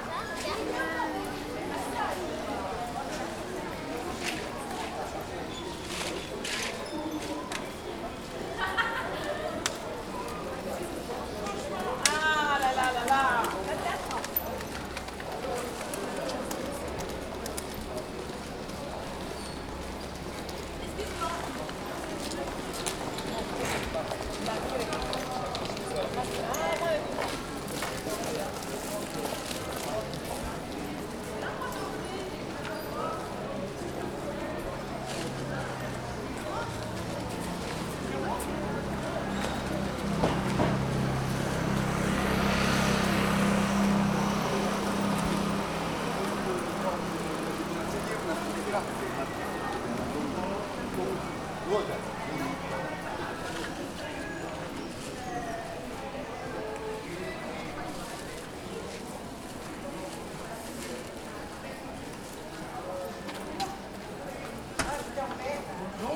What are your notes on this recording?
This recording is one of a series of recording, mapping the changing soundscape around St Denis (Recorded with the on-board microphones of a Tascam DR-40).